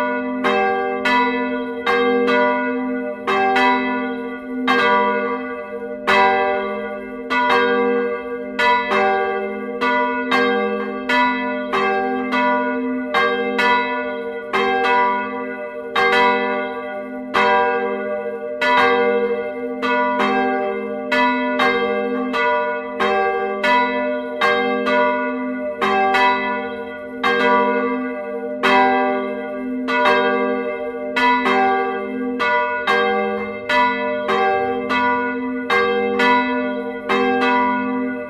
Manual ringing of the two bells of the Genval church. These bells are poor quality and one is cracked.
Rixensart, Belgium, 14 August 2010